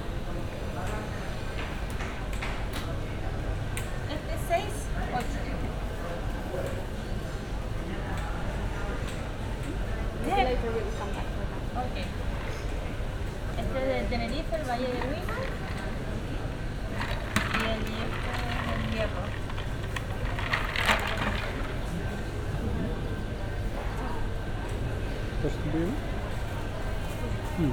Santa Cruz de Tenerife, Calle de José Manuel Guimerá - Municipal Market Our Lady of Africa La Recova
(binaural rec) walking around and recording at the municipal market in Santa Cruz de Tenerife.
Santa Cruz de Tenerife, Spain, 9 September 2016, ~14:00